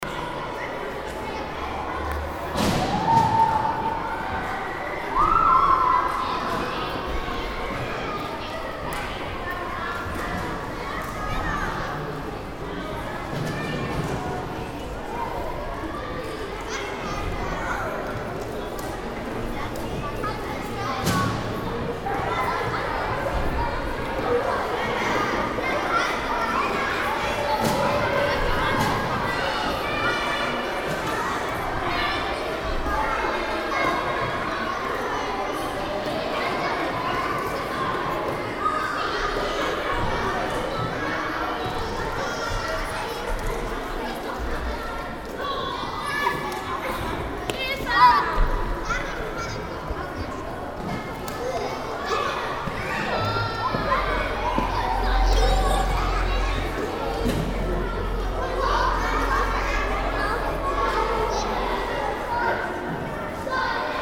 {"title": "dortmund, immermannstraße, depot", "description": "foyer des depot theaters, eines umgebauten strassebahndepots, morgens, theaterfestival theaterzwang, kindertheaterpublikum vor dem einlass\nsoundmap nrw\nsocial ambiences/ listen to the people - in & outdoor nearfield recordings", "latitude": "51.53", "longitude": "7.45", "altitude": "72", "timezone": "GMT+1"}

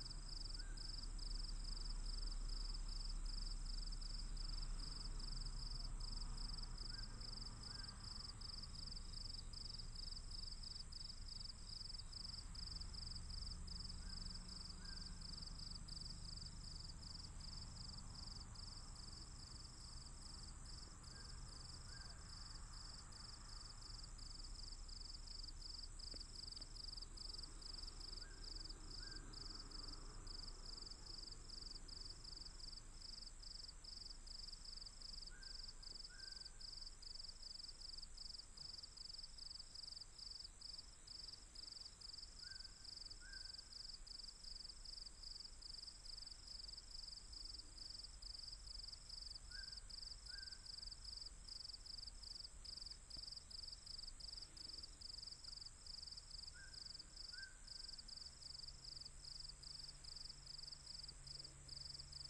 {"title": "佳德, 牡丹鄉 Mudan Township - Night mountains", "date": "2018-04-02 18:31:00", "description": "Night mountains, Traffic sound, Insect noise, Bird call", "latitude": "22.14", "longitude": "120.81", "altitude": "218", "timezone": "Asia/Taipei"}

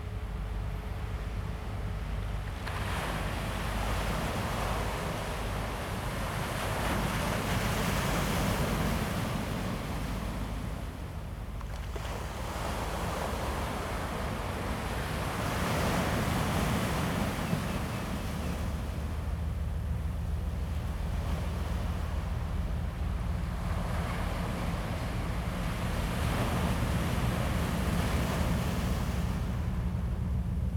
{"title": "富岡里, Taitung City - near the fishing port", "date": "2014-09-06 09:18:00", "description": "Sound of the waves, Aircraft flying through, The weather is very hot, in the coast near the fishing port, Yacht\nZoom H2n MS +XY", "latitude": "22.79", "longitude": "121.19", "altitude": "8", "timezone": "Asia/Taipei"}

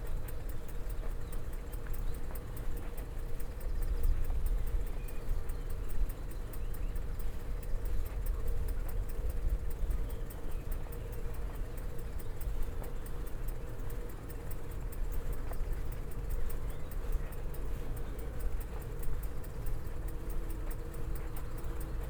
{"title": "walkway along station, Hamm, Germany - quiet station at Easter weekend", "date": "2020-04-12 18:15:00", "description": "walking the food path along the station rails and onto the bridge across street, river and canal; just two trains pulling out of the station while I pass... Easter Sunday under pandemic", "latitude": "51.68", "longitude": "7.81", "altitude": "63", "timezone": "Europe/Berlin"}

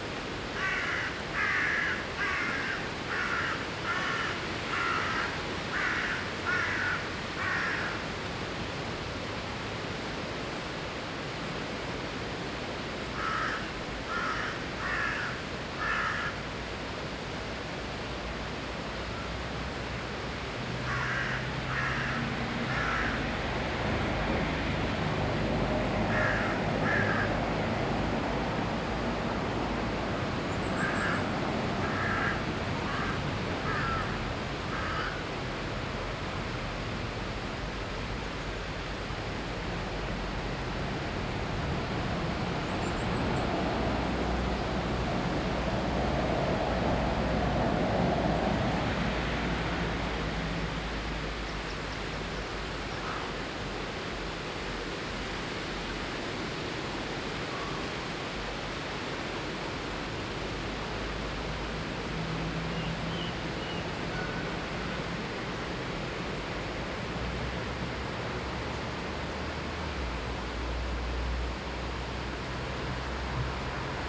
{"title": "Scarborough, UK - Autumn, Peasholm Park, Scarborough, UK", "date": "2012-10-13 05:40:00", "description": "Binaural field recording Autumn, Peasholm Park, Scarborough, UK\nWaterfall, ducks, other bird life", "latitude": "54.29", "longitude": "-0.41", "altitude": "20", "timezone": "Europe/London"}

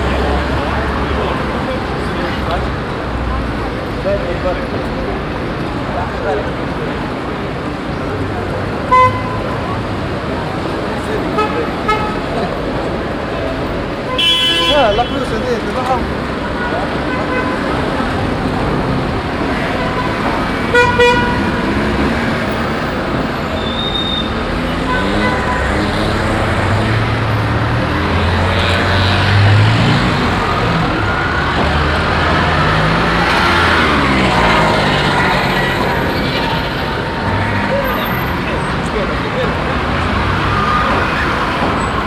{"title": "Ville Nouvelle, Tunis, Tunesien - tunis, place de l'independance", "date": "2012-05-02 09:00:00", "description": "Standing at the corner of Avenue e France and Rue Jamel Abdenasser in the morning time. The sound of car traffic and trams passing by plus the whistles of a policeman and some passengers talking.\ninternational city scapes - social ambiences and topographic field recordings", "latitude": "36.80", "longitude": "10.18", "altitude": "20", "timezone": "Africa/Tunis"}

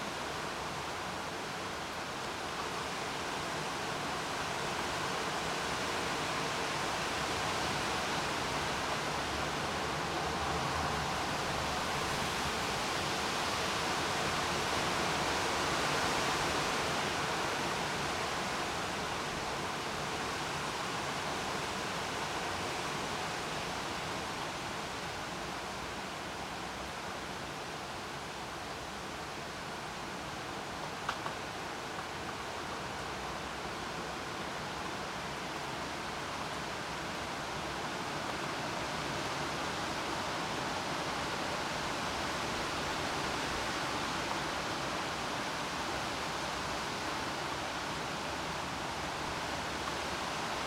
{"title": "Mariahoeve, Den Haag, Nederland - wind en regen, Den Haag", "date": "2011-07-14 14:54:00", "description": "during heavy wind and rain", "latitude": "52.10", "longitude": "4.37", "altitude": "2", "timezone": "Europe/Amsterdam"}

